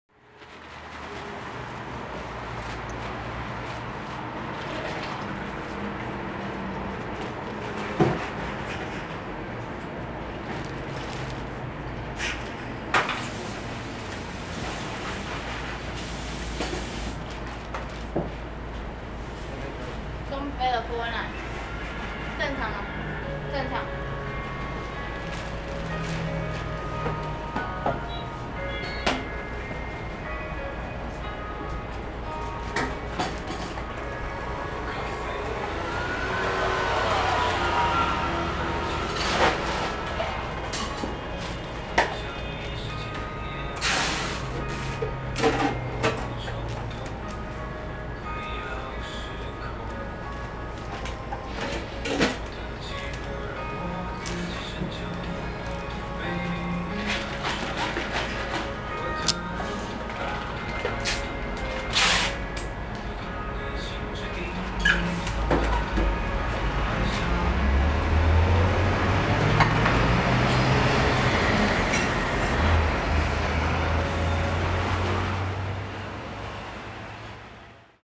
Ciaotou - Beverage stores
in the Beverage stores, Traffic Noise, Sony ECM-MS907, Sony Hi-MD MZ-RH1
橋頭區 (Ciaotou), 高雄市 (Kaohsiung City), 中華民國, 29 March 2012